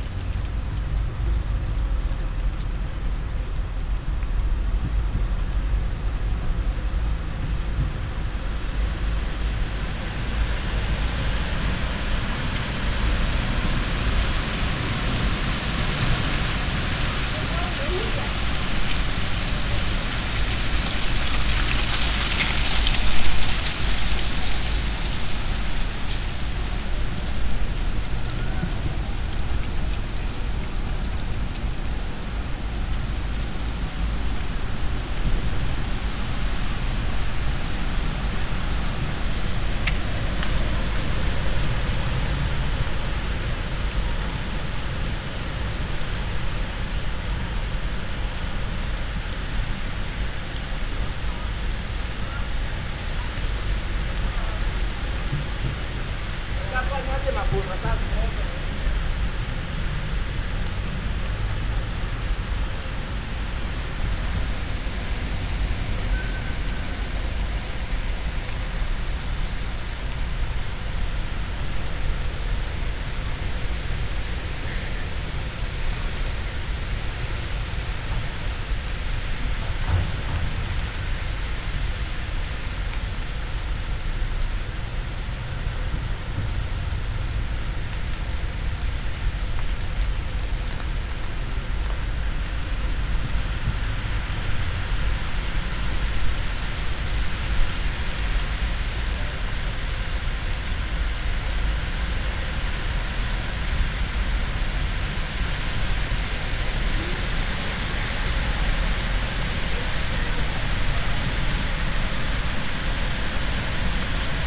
autumn sonic panorama of floating leaves and passers-by caught in the moment of unexpected haste
Slovenia, 7 October, ~18:00